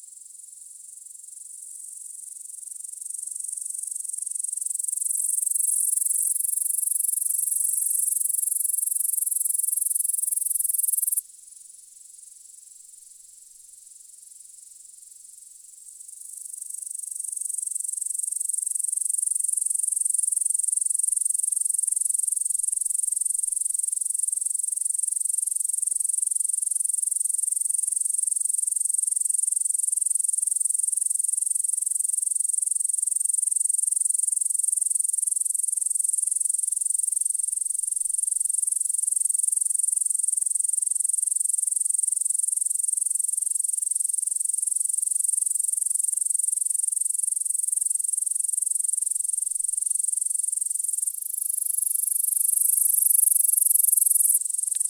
Lithuania, countryside, in a meadow
grasshoppers in actions
5 September 2011